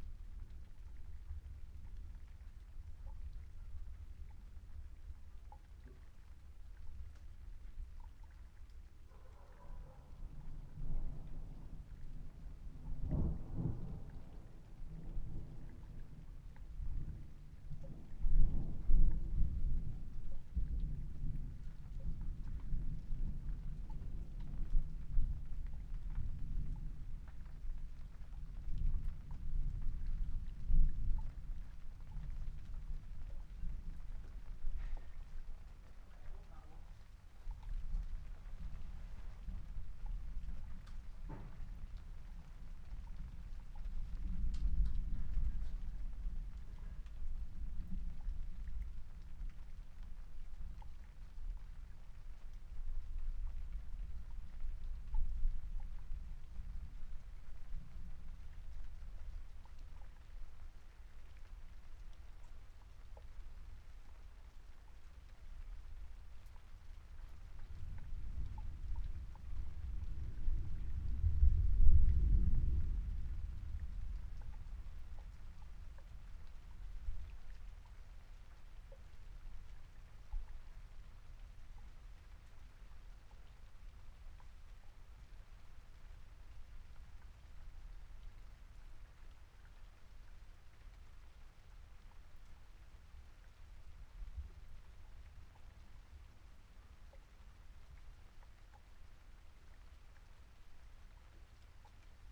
Chapel Fields, Helperthorpe, Malton, UK - thunderstorm ...
thunderstorm ... SASS on tripod to Zoom F6 ... voices ... water percolating down pipes ... the ducks ... again ... song thrush song ... really like this excerpt ...
31 July, 21:10